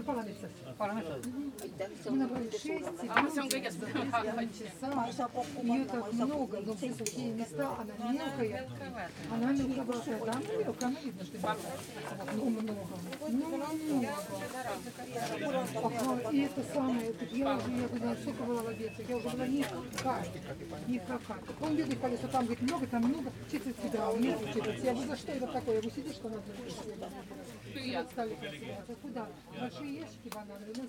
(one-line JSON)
{"title": "Kallaste, Kreis Tartu, Estland - Kallaste, Estonia - Market", "date": "2013-07-05 09:42:00", "description": "Kallaste, Estonia - Market.\n[Hi-MD-recorder Sony MZ-NH900 with external microphone Beyerdynamic MCE 82]", "latitude": "58.66", "longitude": "27.16", "altitude": "42", "timezone": "Europe/Tallinn"}